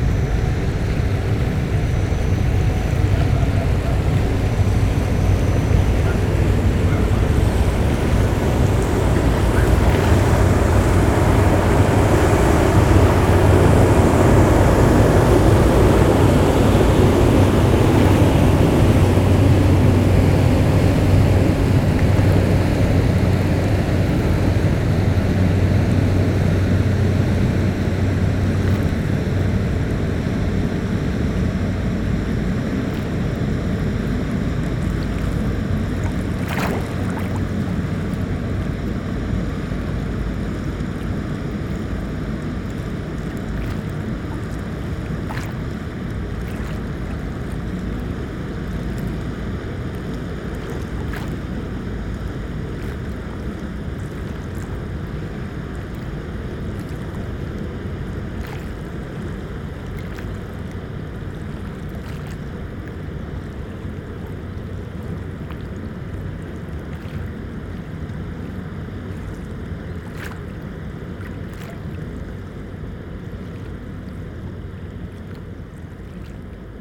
Riemst, Belgium - Boats on the Albertkanaal
Two boats are passing on the Albert canal. The first one is small and slow. The second one is big and makes big waves. It's the Duchesse from Zwijndrecht. IMO number of this boat is 244660540 and it's an oil tanker. If you be very very careful hearing this second boat, you will hear, in the cabin, the small dog who hates me !! Poor driver ;-)
20 January